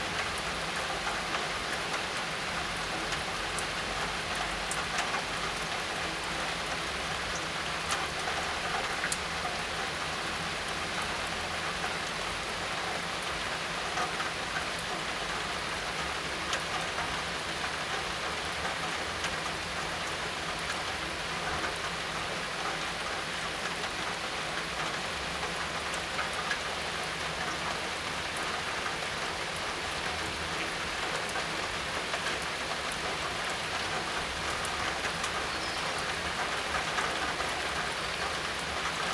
Solesmeser Str., Bad Berka, Germany - Rain in the Neighborhood 2- Binaural
Binaural recording with Soundman OKM and Zoom F4 Field Recorder. Best experienced with headphones.
Occasional vehicular engine in sound.